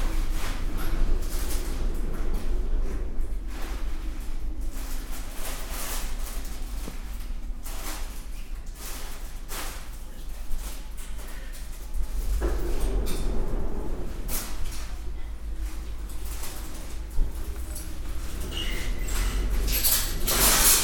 {
  "title": "Sprockhövel, Deutschland - SlammingSupermarketTrolleys",
  "date": "2017-01-23 10:28:00",
  "description": "Noises from the front room of a supermarket. Typical Slashing Sound. Recorded with Tascam DP-05",
  "latitude": "51.29",
  "longitude": "7.20",
  "altitude": "296",
  "timezone": "Europe/Berlin"
}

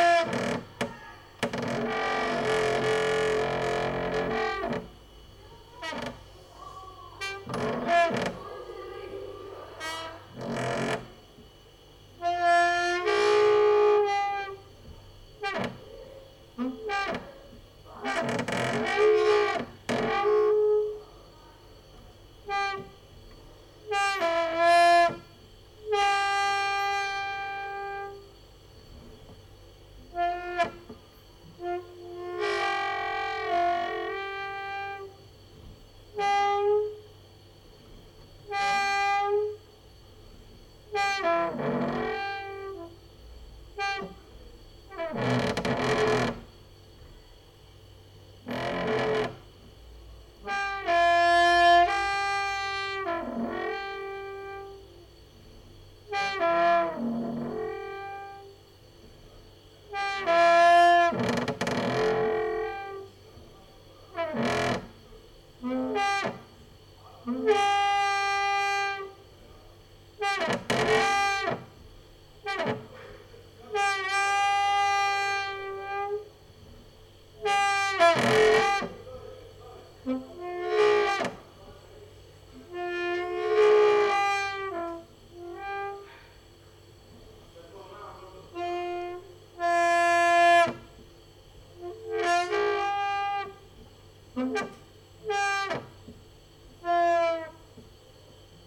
{
  "title": "Mladinska, Maribor, Slovenia - late night creaky lullaby for cricket/23",
  "date": "2013-08-07 23:43:00",
  "description": "cricket is quieter this year ... night walker outside, fridge inside",
  "latitude": "46.56",
  "longitude": "15.65",
  "altitude": "285",
  "timezone": "Europe/Ljubljana"
}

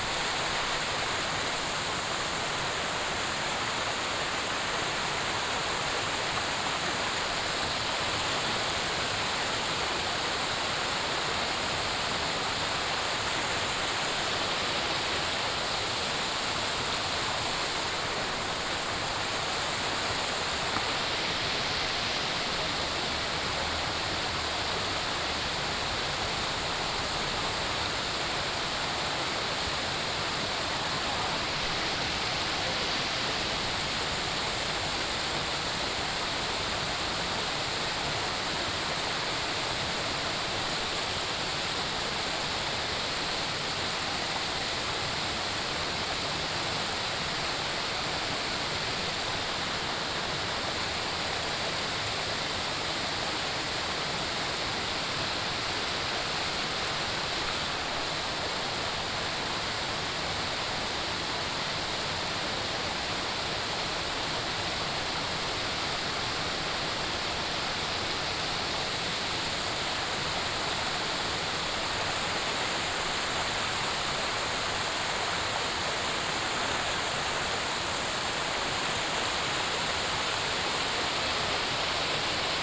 {
  "title": "五峰旗瀑布, 礁溪鄉大忠村, Yilan County - waterfall and stream sound",
  "date": "2016-11-18 10:53:00",
  "description": "waterfall, stream sound, Tourists",
  "latitude": "24.83",
  "longitude": "121.75",
  "altitude": "145",
  "timezone": "Asia/Taipei"
}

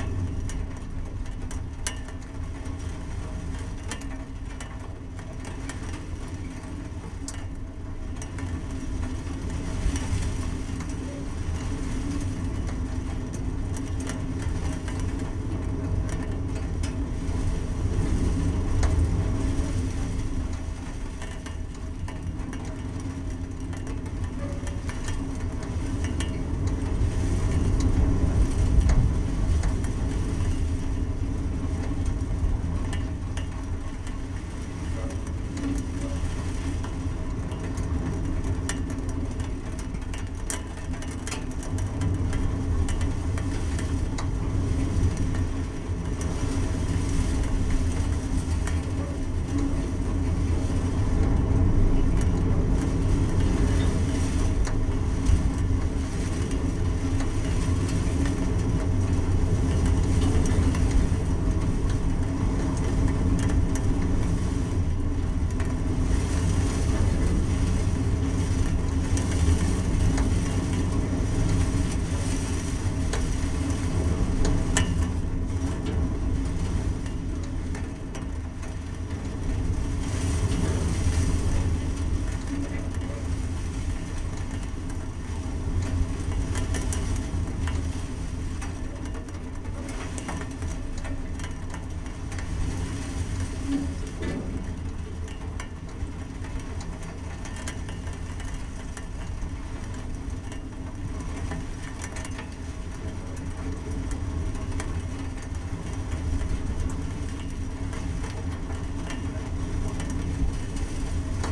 {
  "title": "Lipa, Kostanjevica na Krasu, Slovenia - Transmitter on mount Trstelj (643m)",
  "date": "2020-12-28 14:10:00",
  "description": "Tv, radio and gsm transmitter on mount Trstelj (643m) in heavy wind and some rain.\nRecorded with MixPre II and contact microphone AKG C411, 50Hz HPF.",
  "latitude": "45.86",
  "longitude": "13.70",
  "altitude": "636",
  "timezone": "Europe/Ljubljana"
}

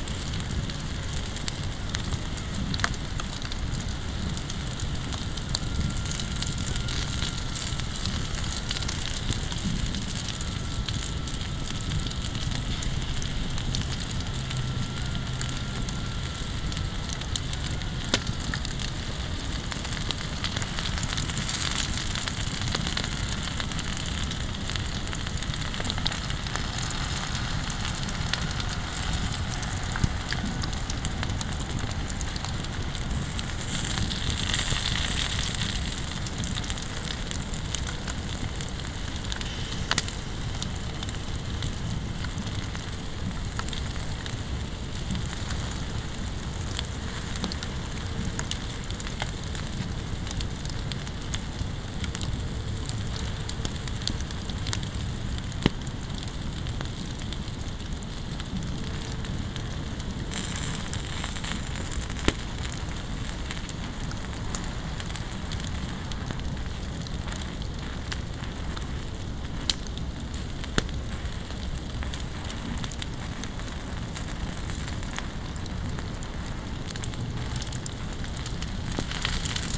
{
  "title": "Kolonia Mazurska, Mierki, Poland - (853 AB) Fireplace in the woods",
  "date": "2021-10-14 20:45:00",
  "description": "Stereo recording of a fireplace with some grill and food on it (thus the water hisses). In the background, there is a chance for some horse sounds from a barn.\nRecorded with a pair of Sennheiser MKH 8020, 17cm AB, on Sound Devices MixPre-6 II.",
  "latitude": "53.60",
  "longitude": "20.36",
  "altitude": "175",
  "timezone": "Europe/Warsaw"
}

